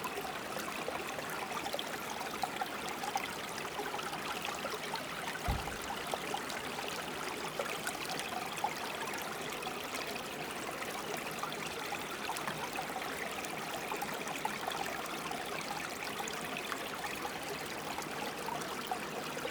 {"title": "Bubbling streams amongst granite rocks, Baie-des-Rochers, QC, Canada - Bubbling streams amongst granite rocks", "date": "2021-10-25 13:22:00", "description": "The small river that meets the St Lawrence at the Baie des Rochers. Two mics suspended just above the water surface were moved slowly to bring out the infinite number of different ripples and eddies present.", "latitude": "47.95", "longitude": "-69.81", "altitude": "15", "timezone": "America/Toronto"}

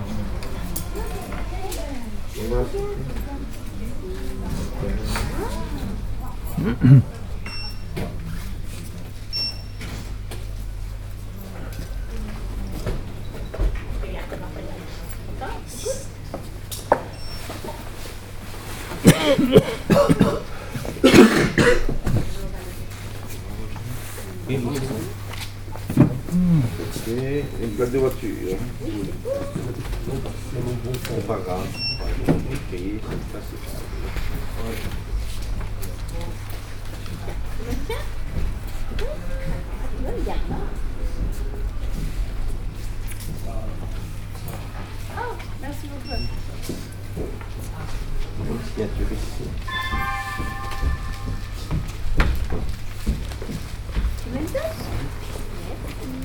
{"title": "Brussels, Rue Sterckx, Post Office", "date": "2011-12-14 11:39:00", "latitude": "50.83", "longitude": "4.34", "altitude": "58", "timezone": "Europe/Brussels"}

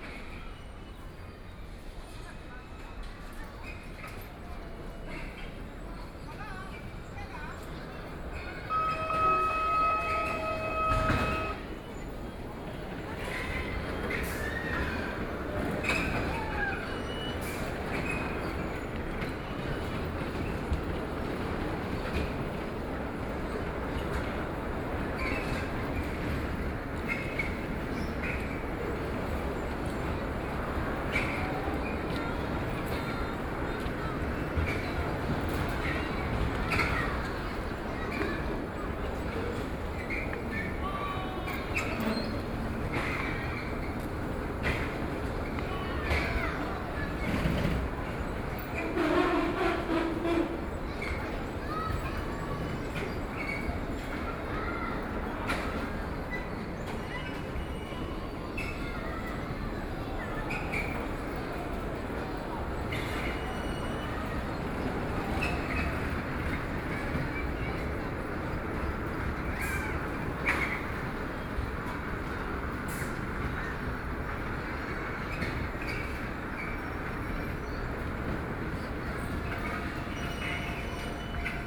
{"title": "People's Park, Shanghai - in the park", "date": "2013-11-23 16:50:00", "description": "Mechanical sound Recreation Area, The play area in the park, Crowd, Cries, Binaural recording, Zoom H6+ Soundman OKM II", "latitude": "31.23", "longitude": "121.47", "altitude": "7", "timezone": "Asia/Shanghai"}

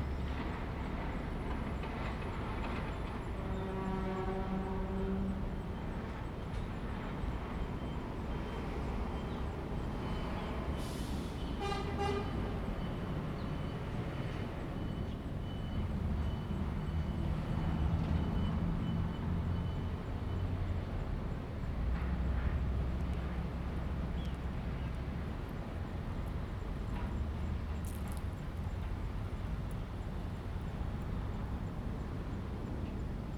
{"title": "中華路, Dayuan Dist., Taoyuan City - Basketball court", "date": "2017-08-18 16:01:00", "description": "The sound of construction, The plane flew through, traffic sound, bird, Zoom H2n MS+XY", "latitude": "25.07", "longitude": "121.20", "altitude": "21", "timezone": "Asia/Taipei"}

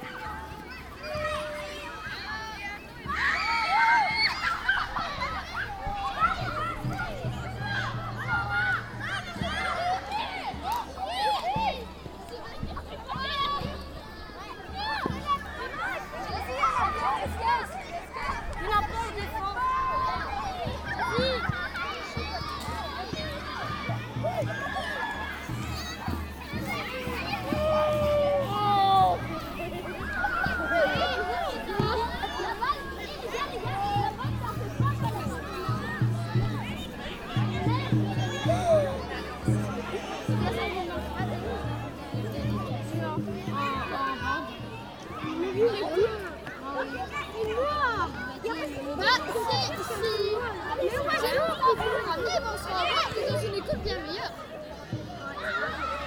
{"title": "Court-St.-Étienne, Belgique - Saint John's Eve fire in the Steiner school", "date": "2017-06-24 21:00:00", "description": "Into the Steiner school, people are celebrating the Saint John's Eve fire. Extremely important moment in 3:30 mn, very young children jump over the fire, in aim to burn some bad moments or their life, it's a precious gesture of purification.", "latitude": "50.65", "longitude": "4.59", "altitude": "127", "timezone": "Europe/Brussels"}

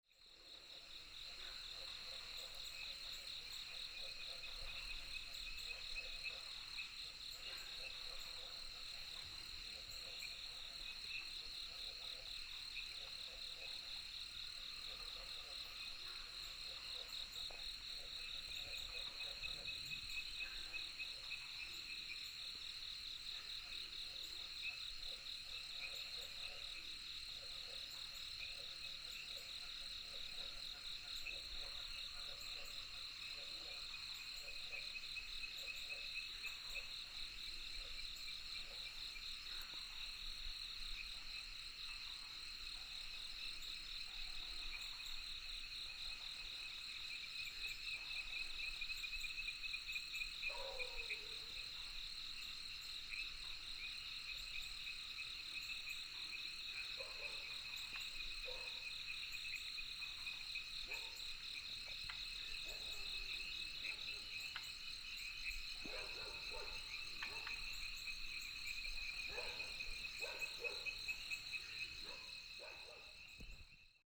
{"title": "江山樂活, 埔里鎮桃米里 - Mountain night", "date": "2016-06-07 19:33:00", "description": "Frog sounds, Insect sounds, Dogs barking", "latitude": "23.93", "longitude": "120.89", "altitude": "772", "timezone": "Asia/Taipei"}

